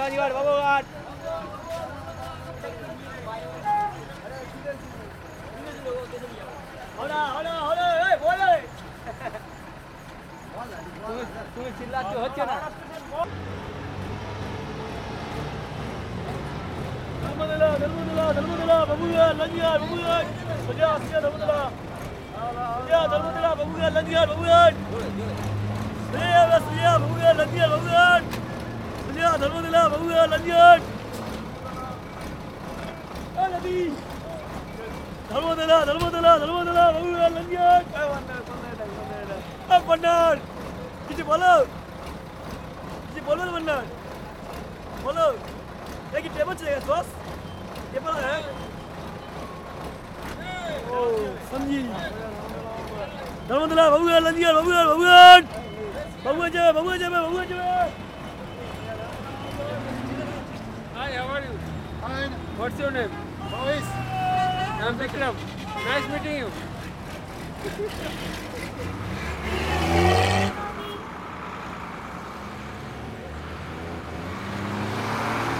Calcutta - Près de Nehru road
Ambiance urbaine
West Bengal, India, 4 December 2002, 16:15